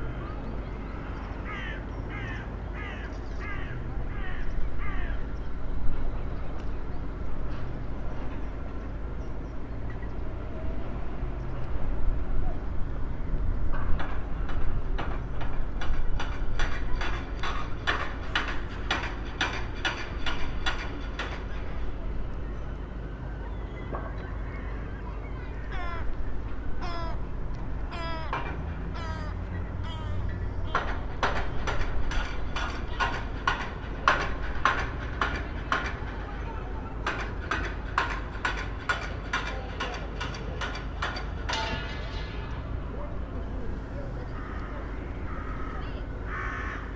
Weymouth harbour, Dorset, UK - Sunday afternoon on Weymouth harbour